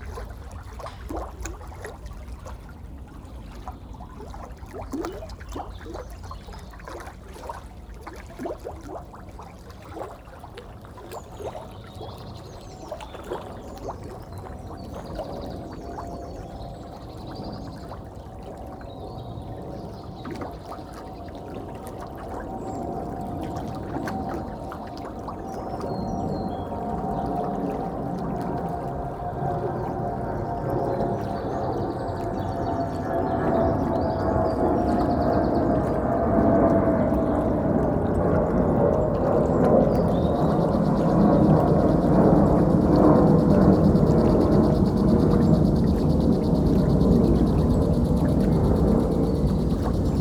Elisabethweg, Berlin, Germany - Fast flowing river Panke, gloops, three planes and a water sprinkler
The sound of the wealthy back gardens of Pankow.
20 April 2019, ~12:00